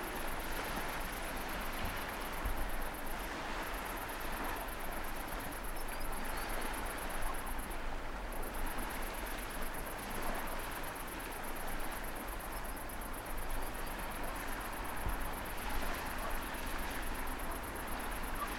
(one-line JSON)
{"title": "Rue Saint-Julien, Plouhinec, France - Summer night in Brittany", "date": "2018-07-29 22:00:00", "description": "Recorded during a warm summer night in Audierne. You can hear crickets and the waves as the sun has completely settled down.", "latitude": "48.01", "longitude": "-4.54", "altitude": "7", "timezone": "Europe/Paris"}